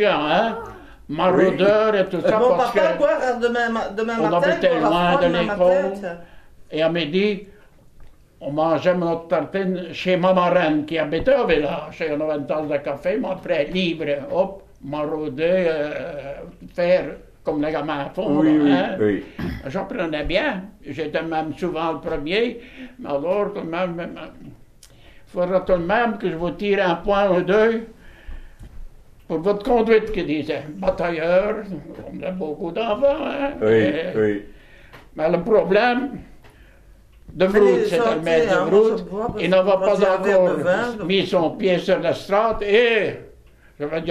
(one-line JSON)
{"title": "Court-St.-Étienne, Belgique - Old man memories", "date": "2011-11-11 17:30:00", "description": "An old man testimony : Jozef Donckers. He worked on a local paper mill.", "latitude": "50.62", "longitude": "4.55", "altitude": "84", "timezone": "Europe/Brussels"}